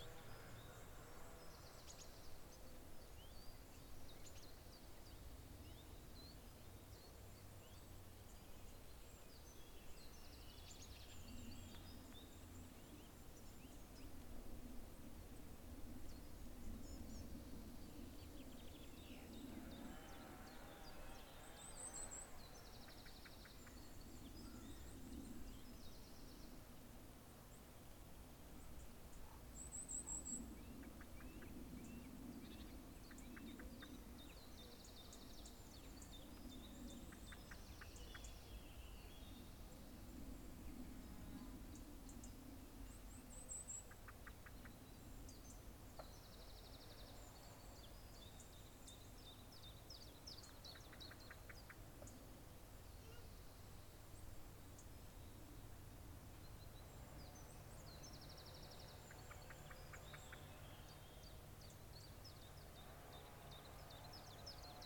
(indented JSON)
{
  "title": "Haldon Forest, Exeter, UK - Under pylons Haldon Forest",
  "date": "2022-06-29 10:23:00",
  "description": "This recording was made using a Zoom H4N. The recorder was positioned on the track on the butterfly walk in Haldon Forest Park under the electricity pylons. This area has the vegetation under the pylons cleared regularly this provides important habitat for butterflies such as the rare pearl-bordered fritillary. The pylons pass through the landscape and the slight audible buzz that they emit can be heard on the recording. A chainsaw is being used in the forest which can also be heard. This recording is part of a series of recordings that will be taken across the landscape, Devon Wildland, to highlight the soundscape that wildlife experience and highlight any potential soundscape barriers that may effect connectivity for wildlife.",
  "latitude": "50.65",
  "longitude": "-3.60",
  "altitude": "153",
  "timezone": "Europe/London"
}